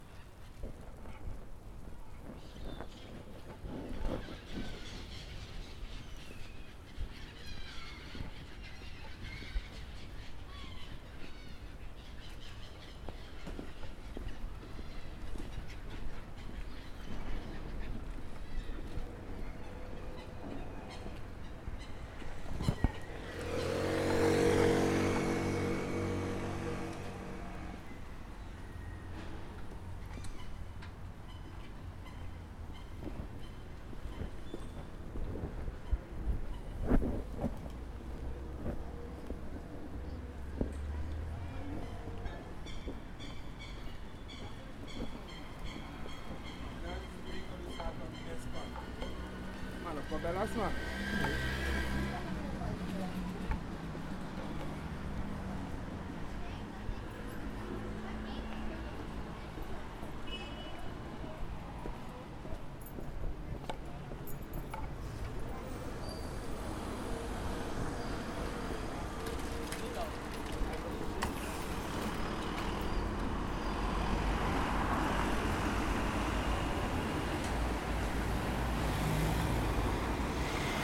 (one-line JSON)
{"title": "Van Woustraat, Amsterdam, Nederland - Oversteek momentje / Crossing moment", "date": "2013-09-10 15:00:00", "description": "(description in English below)\nHet is oppassen geblazen op de drukke van Woustraat. Deze straat steek je niet zomaar over, zeker niet met kinderen. Om aan de andere kant van de wijk te komen, kun je niet om dit oversteekmomentje heen. De rust keert vrij snel terug zodra dit punt gepasseerd is. Dan keert de rust weer terug.\nYou have to watch out while you are at the van Woustraat. This street you don't cross just at random, especially not with children. To get to the other part of the neighbourhood, you're forced to cross this street. The peace returns quite quickly once this point is passed and the tranquility of the neighbourhood returns.", "latitude": "52.35", "longitude": "4.90", "altitude": "5", "timezone": "Europe/Amsterdam"}